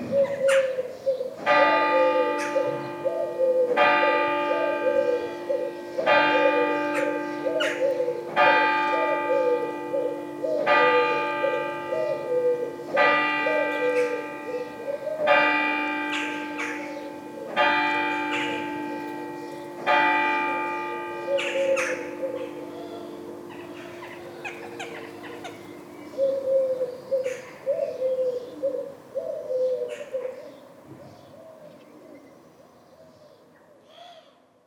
{"title": "Saint-Martin-de-Ré, France - The very peaceful mood of a french village during a sunday morning", "date": "2018-05-20 08:58:00", "description": "In the center of Saint-Martin-De-Ré, near the church.\nFrom 0:00 mn to 2:30 mn, strictly nothing is happening and it's so peaceful (and also important to record it, even if there's nothing).\n2:30 mn : bells are ringing nine.\nAfter this, birds are excited. You can hear : Jackdaws, Common Wood Pigeons, European Turtle Doves, Common Swifts.\n5:20 mn : bells are ringing again.\nBeautiful and so so quiet.", "latitude": "46.20", "longitude": "-1.37", "altitude": "12", "timezone": "Europe/Paris"}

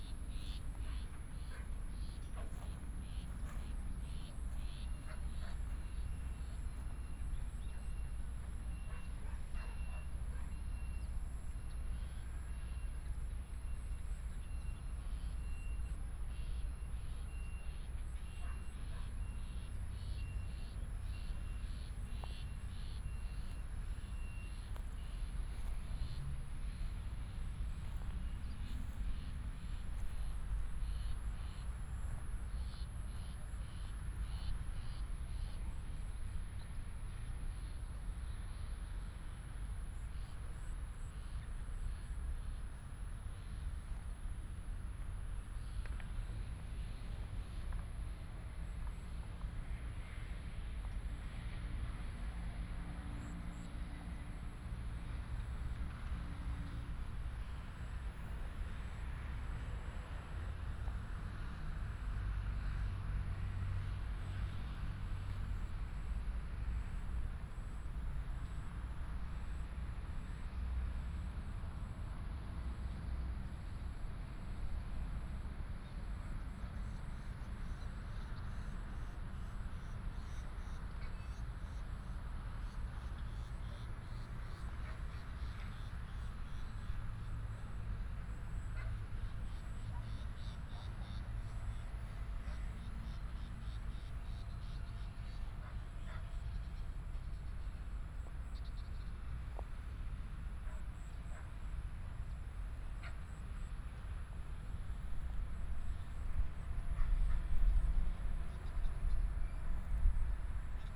{"title": "空軍七村, Hsinchu City - Walk in the park", "date": "2017-09-27 17:53:00", "description": "Walk in the park with a large green space, Dog sound, Binaural recordings, Sony PCM D100+ Soundman OKM II", "latitude": "24.82", "longitude": "120.96", "altitude": "14", "timezone": "Asia/Taipei"}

